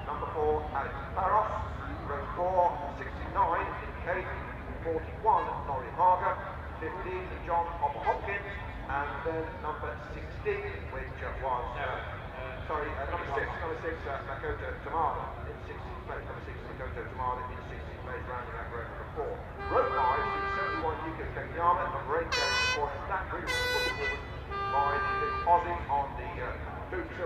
Castle Donington, UK - British Motorcycle Grand Prix 2003 ... moto grand prix ...
Warm up ... mixture of 990cc four strokes and 500cc two strokes ... Starkeys ... Donington Park ... warm up and associated noise ... Sony ECM 959 one point stereo mic ... to Sony Minidisk ...